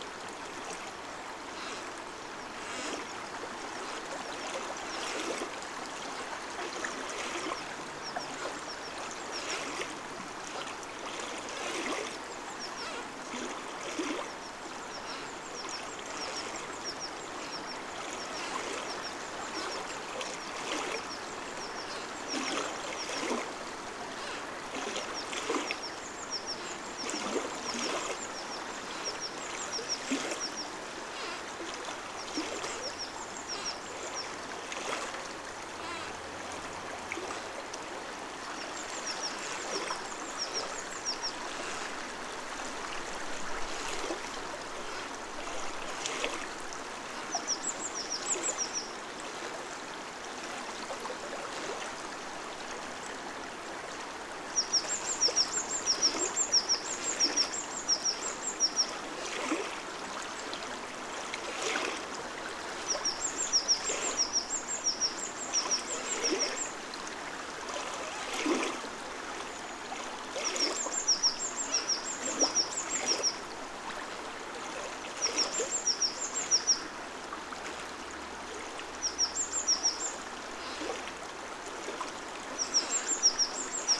Vyzuonos, Lithuania, fallen tree in a flooded river
flooded river. some fallen tree playing with a strong stream